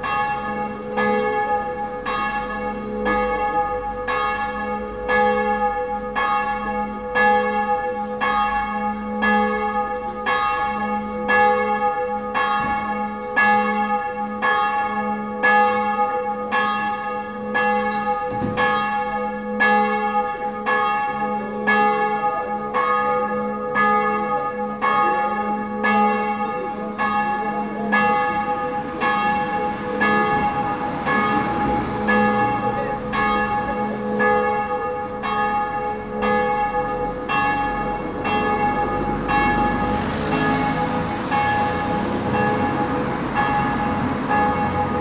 Place du Breuil, Pont-en-Royans, France - Midi à Pont en Royan
center of the village, it is midday the church bell is manifesting itself
Il est midi à Pont en Royan la cloche de l'église se manifeste